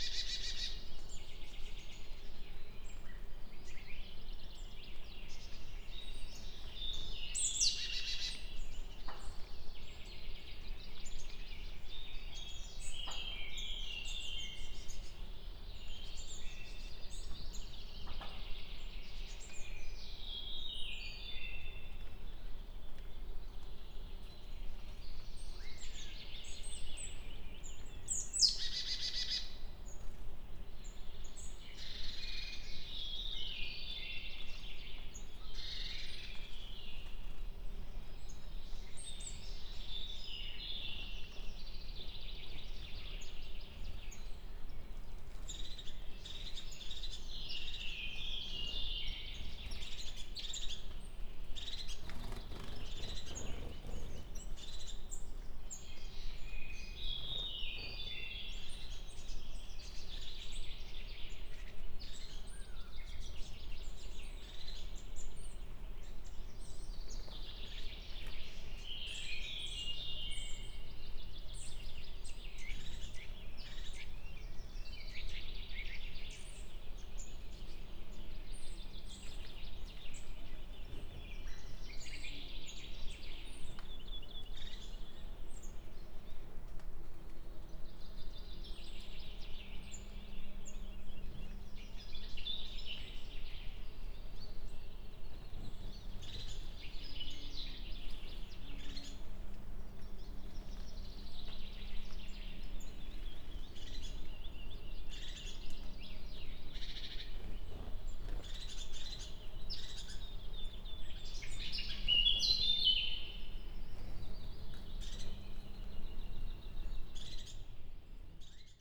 ex Soviet military base, Vogelsang - ambience after light rain
Garnison Vogelsang, ex Soviet base, on the balcony of former cinema/theater, raindrops, birds, insects
(SD702, MKH8020)